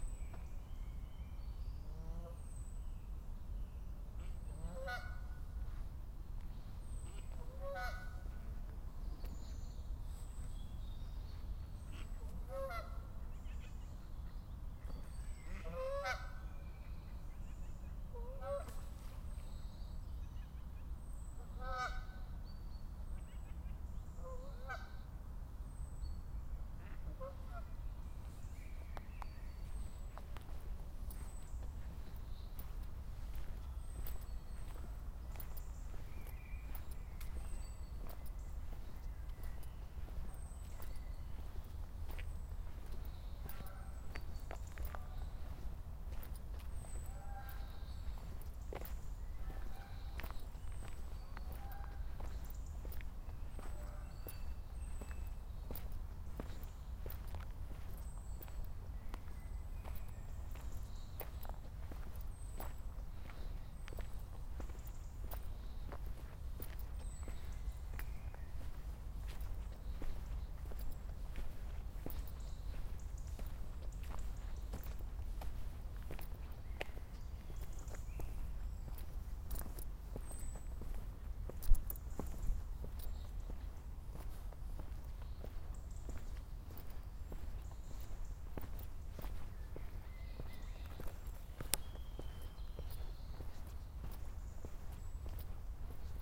pond walk - Köln, pond walk
evening atmosphere, pond at "stadtwald" park, Cologne, may 29, 2008. - project: "hasenbrot - a private sound diary"